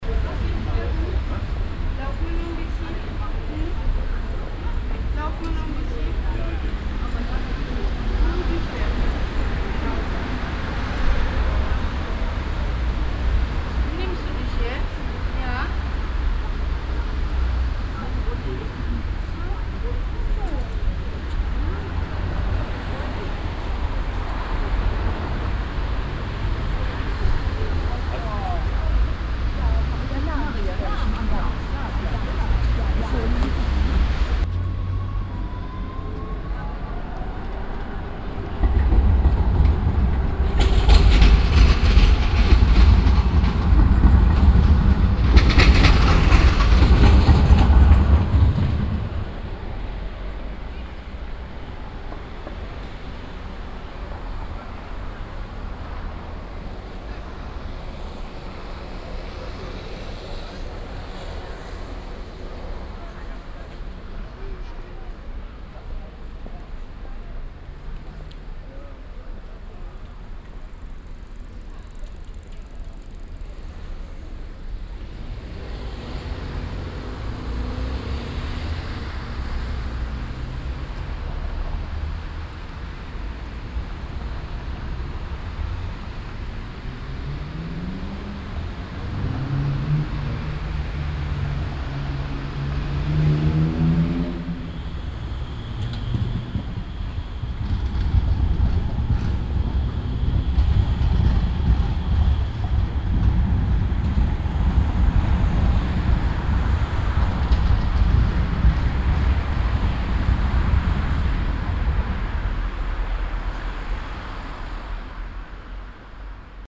people and dogs, traffic and a passing by tram
soundmap nrw - social ambiences and topographic field recordings